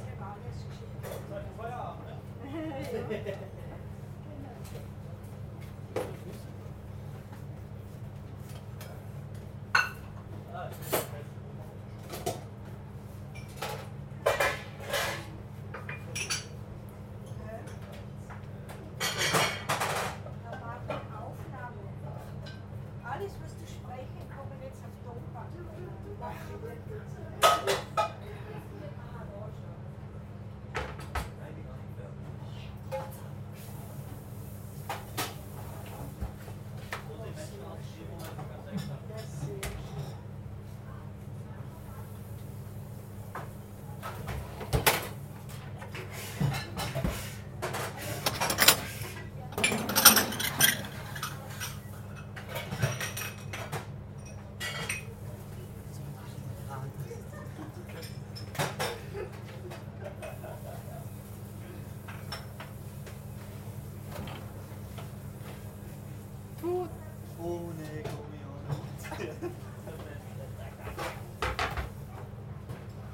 St. Gallen (CH), restaurant kitchen
inside recording, kitchen of restaurant "Zum Goldenen Schäfli" (recommended!).
recorded june 27th, 2008, around 10 p. m.
project: "hasenbrot - a private sound diary"
St. Gallen, Switzerland